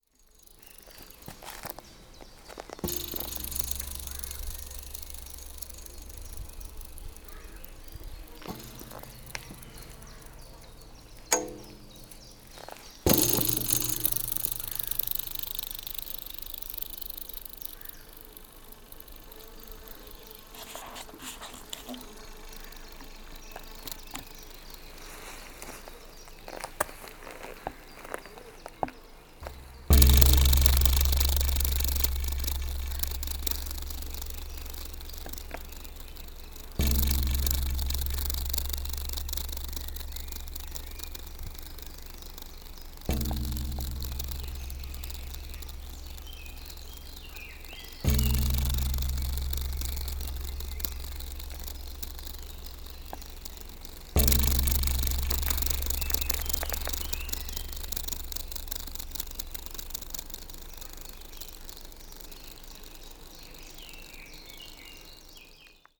Sierakow Landscape Park, building at the lake beach - metal bar
There is an desolated building at the beach in the landscape park in Sierakow. At the side of it there used to be a big double wing door. The frame is still there, all rusted, bent and loose. When kicked it makes a bell/gong like sound as well as long, vibrant sound - almost like a cricket. (roland r-07)
1 May 2019, 12:45